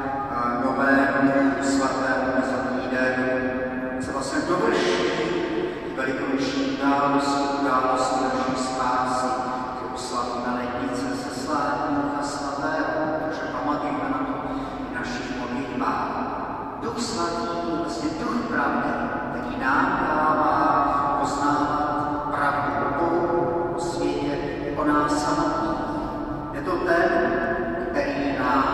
Prague, Czech Republic - Church of st. Antonin at Strossmayer square
Early evening at the Square of Strossmayer, in front and inside of the church of St Antonín Paduánský, The church was founded in the beginning of 20th century. First official name in 1908 was Bubenské Square, however was called In front of the church.